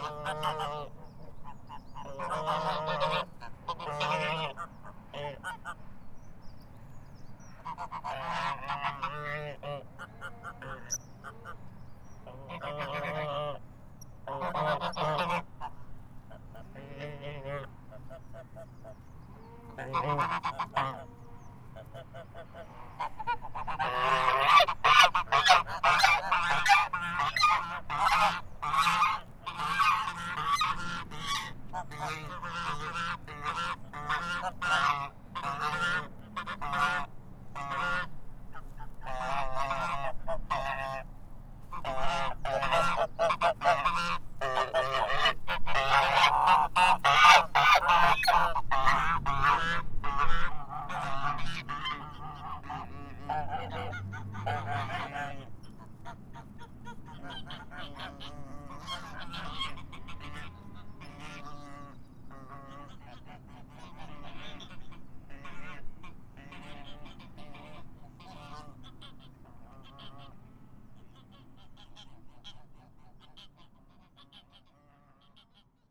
Quartier des Bruyères, Ottignies-Louvain-la-Neuve, Belgique - Unhappy geese
Near the lake, geese are unhappy of my presence. Fshhhh !